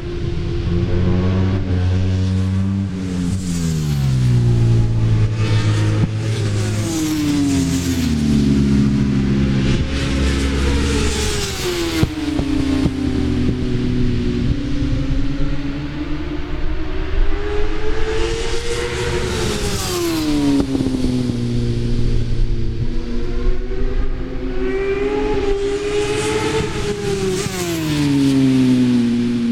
Brands Hatch GP Circuit, West Kingsdown, Longfield, UK - british superbikes 2003 ... superbikes ...
british superbikes 2003 ... superbikes free practice ... one point stereo mic to minidisk ...
21 June 2003